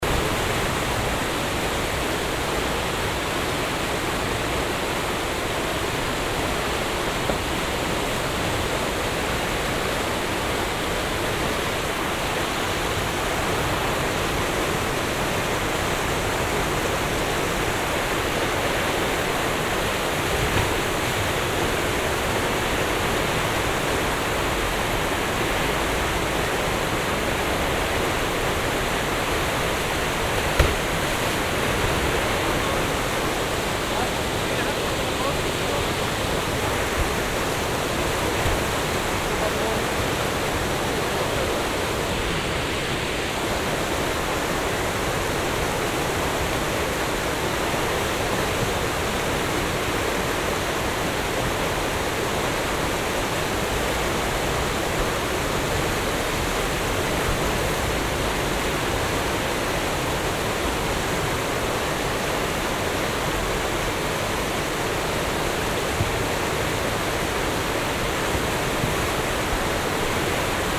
lippstadt, am siek, canou drivers on the river
a group of young canou drivers rehearse loopings with their small kajak boaats
soundmap nrw - social ambiences and topographic field recordings
Lippstadt, Germany